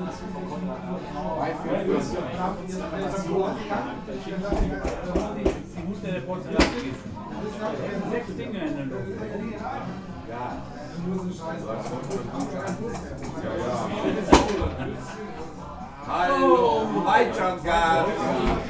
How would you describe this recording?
die eule, klarastr. 68, 45130 essen